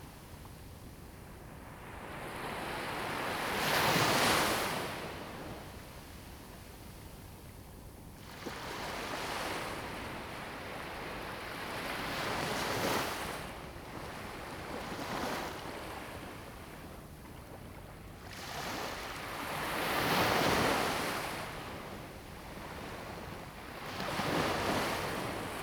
{"title": "Penghu County, Taiwan - Sound of the waves", "date": "2014-10-21 09:11:00", "description": "In the beach, Sound of the waves\nZoom H2n MS +XY", "latitude": "23.56", "longitude": "119.65", "altitude": "5", "timezone": "Asia/Taipei"}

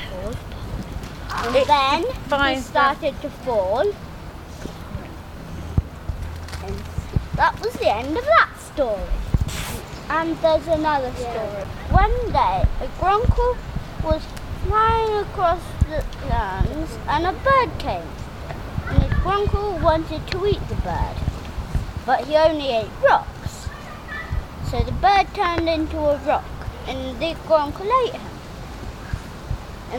{"title": "Thorncombe Woods, Dorset, UK - Storytelling around the fire", "date": "2015-08-06 14:15:00", "description": "Children from Dorset Forest School tell stories around a fire in the woods, based on the clay animals they have made. Other children cook marshmallows.\nSounds in Nature workshop run by Gabrielle Fry. Recorded using an H4N Zoom recorder and Rode NTG2 microphone.", "latitude": "50.73", "longitude": "-2.39", "altitude": "106", "timezone": "Europe/London"}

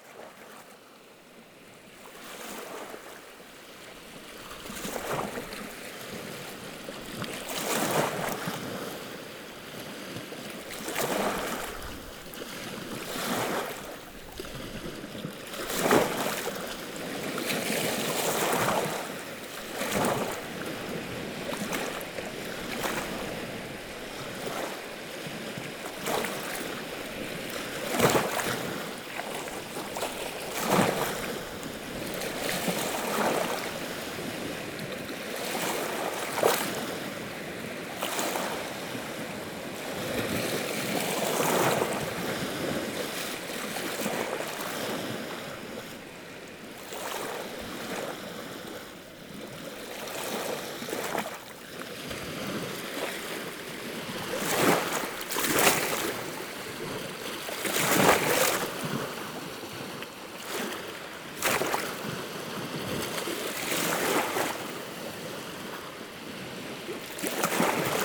{"title": "Noratus, Arménie - Sevan lake", "date": "2018-09-03 19:00:00", "description": "Quiet sound of the Sevan lake, which is so big that the local call it the sea.", "latitude": "40.40", "longitude": "45.22", "altitude": "1902", "timezone": "GMT+1"}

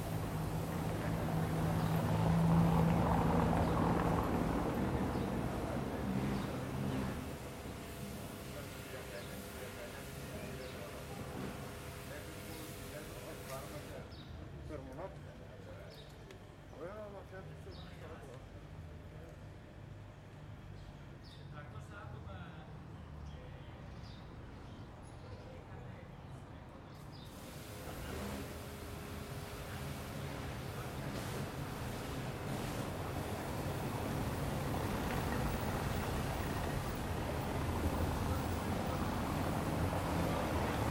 {"title": "Βασ. Κωνσταντίνου, Ξάνθη, Ελλάδα - Antika Square/ Πλατεία Αντίκα- 10:30", "date": "2020-05-12 10:30:00", "description": "Mild traffic, people passing by, talking.", "latitude": "41.14", "longitude": "24.89", "altitude": "88", "timezone": "Europe/Athens"}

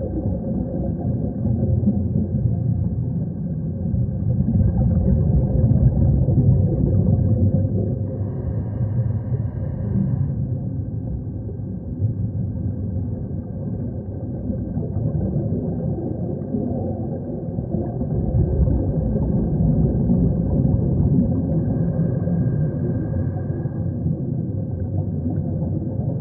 {"title": "boxberg, transnaturale, installation, klangplateau - boxberg o/l, installation klangtableau recording 02", "date": "2009-11-26 20:48:00", "description": "long recording of the sound lab work during the transnaturale 2009 - here: water ambience based on local field recordings", "latitude": "51.40", "longitude": "14.57", "altitude": "133", "timezone": "Europe/Berlin"}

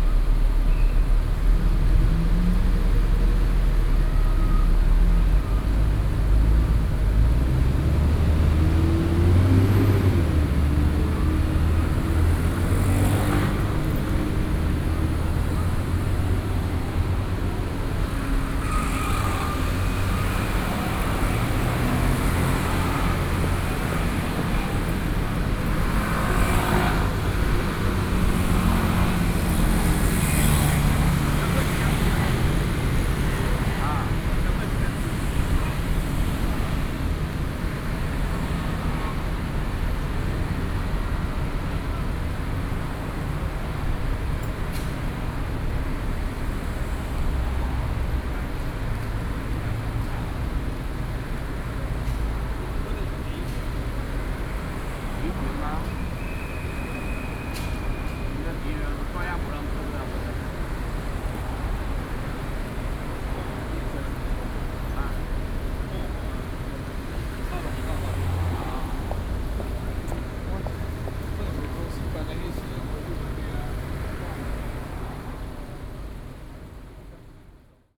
{"title": "Sec., Dunhua S. Rd., Da’an Dist., Taipei City - Fountain", "date": "2012-05-31 14:12:00", "description": "In the Plaza, Outside shopping mall, Taxi call area, Fountain, Traffic Sound\nSony PCM D50+ Soundman OKM II", "latitude": "25.03", "longitude": "121.55", "altitude": "39", "timezone": "Asia/Taipei"}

